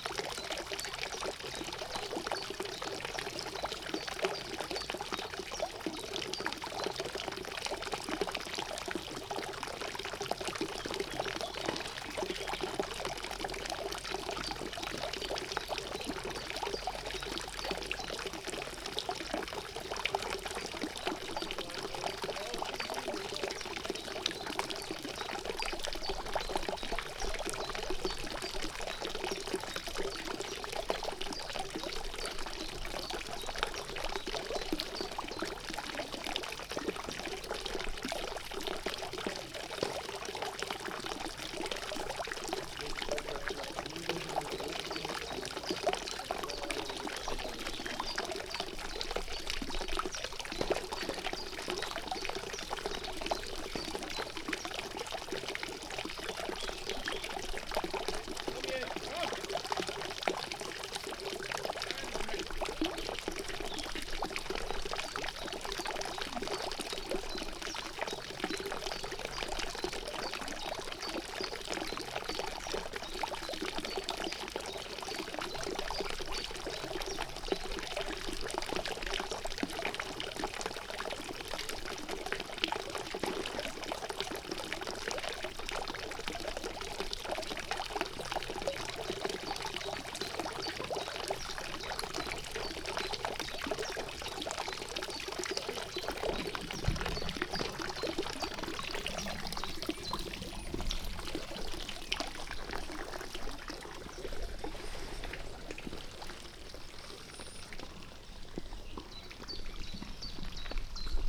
Ottilienquelle, Paderborn, Deutschland - Ottilienquelle ueber Wasser
a fountain
of reciprocity
back and forth
appreciating
every offer of yours
never
complaining
about
one of your moves
or moods
a place for swimming
out in the open
sky
Nordrhein-Westfalen, Deutschland, 11 July